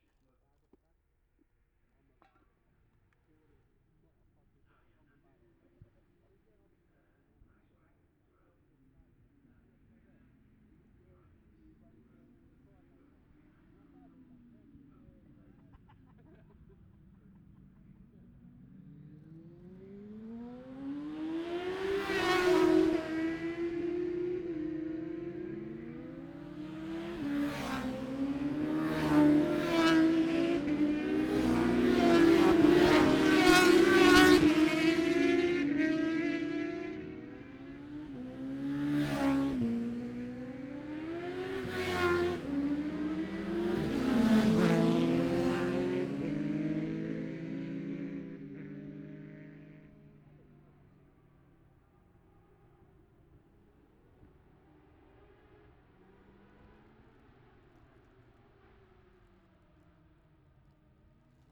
Jacksons Ln, Scarborough, UK - olivers mount road racing 2021 ...
bob smith spring cup ... classic superbikes qualifying ... luhd pm-01 mics to zoom h5 ...